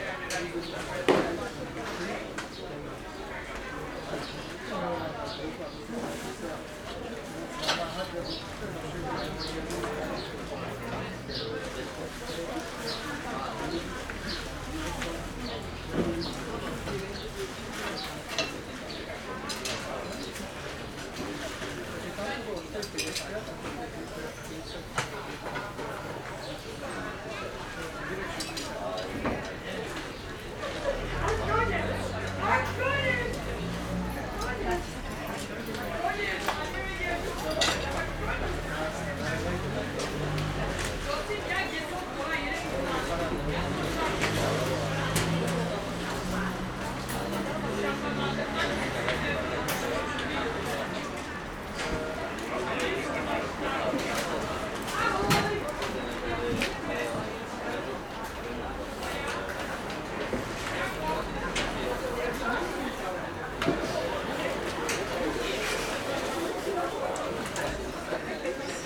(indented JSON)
{
  "title": "Bratislava, Market at Žilinská street - Market atmosphere XI",
  "date": "2014-06-11 09:23:00",
  "description": "recorded with binaural microphones",
  "latitude": "48.16",
  "longitude": "17.11",
  "altitude": "155",
  "timezone": "Europe/Bratislava"
}